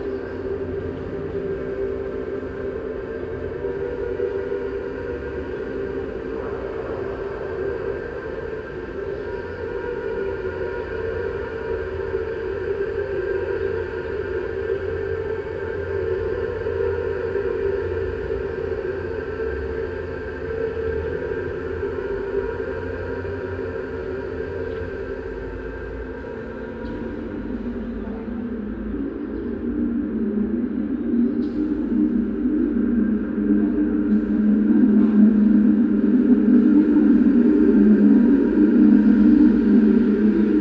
At the monument of Cetatuia. A recording of the multi channel day composition of the temporary sound and light installation project Fortress Hill interfering with the city sound and light wind attacks. headphone listening recommeded.
Soundmap Fortress Hill//: Cetatuia - topographic field recordings, sound art installations and social ambiences
Cluj-Napoca, Romania, 26 May 2014, 11:00